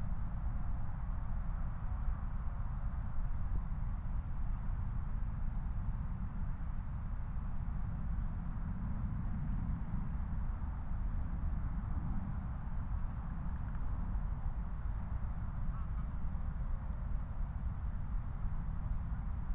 Moorlinse, Berlin Buch - near the pond, ambience
12:19 Moorlinse, Berlin Buch